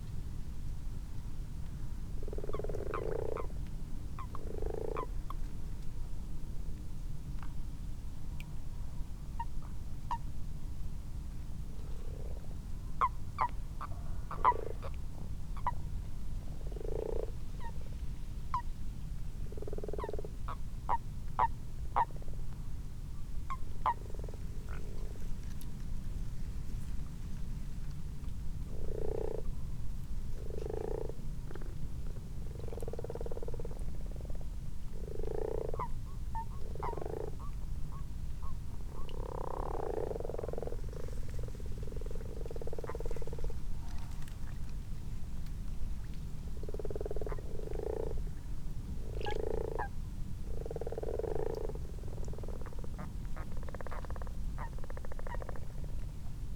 Malton, UK - frogs and toads ...
common frogs and common toads in a garden pond ... xlr sass to zoom h5 ... time edited unattended extended recording ...